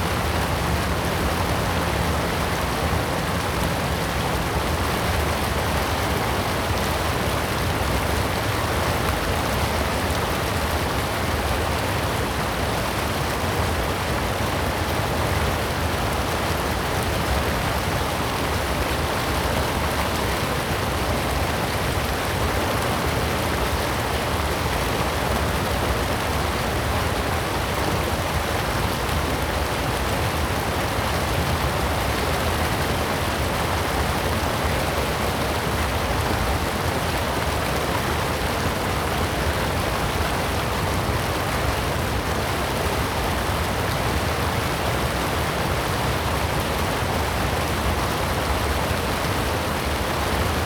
桃米溪, 桃米里 Nantou County - Weir
Weir, stream
Zoom H2n MS+XY